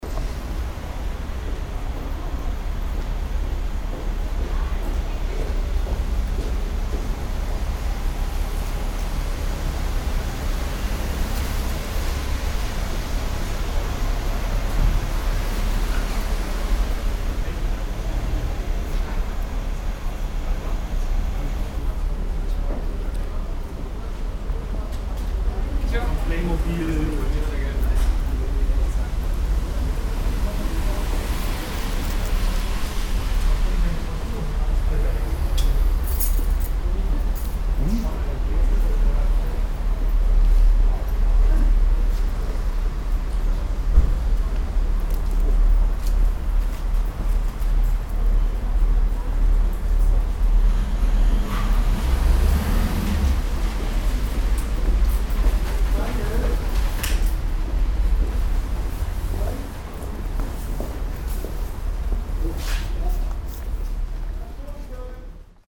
stuttgart, dorotheenstraße, unter arkaden
gang unter den arkaden der alten markthalle, schritte, verkehr
soundmap d: social ambiences/ listen to the people - in & outdoor nearfield recordings